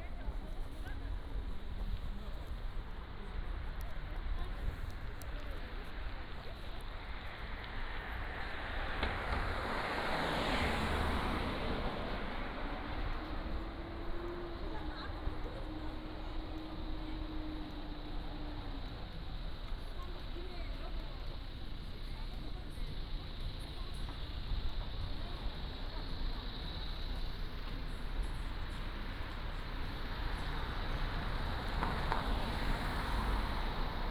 Walking in the Street, Traffic Sound